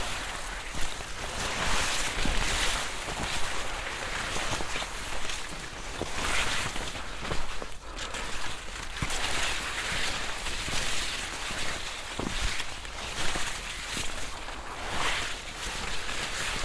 alscheid, sweet corn field
A slow walk through a sweet corn field. The sound of the leaves passing by.
Alscheid, Maisfeld
Ein langsamer Gang durch ein Maisfeld. Das Geräusch der Blätter.
Alscheid, champ de maïs
Une lente promenade à travers un champ de maïs. Le son des feuilles quand on passe.
Project - Klangraum Our - topographic field recordings, sound objects and social ambiences